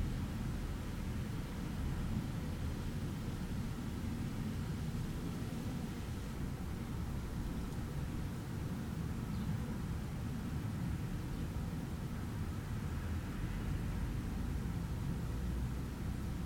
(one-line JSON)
{"title": "Le Mans, France - Near the grave", "date": "2017-08-14 14:30:00", "description": "Near the grave of Jean-Luc Lecourt, a singer better known as Jean-Luc le Ténia. It means Jean-Luc, his first name, the tapeworm. He committed suicide on 2011, may 3. His tomb is completely empty, excerpt an only hot pepper pot. His name is hidden on the right of the grave. The google view is prior to 2011, as the place is empty.\nRecording is 5 minuts of the very big silence near the grave.", "latitude": "48.02", "longitude": "0.19", "altitude": "51", "timezone": "Europe/Paris"}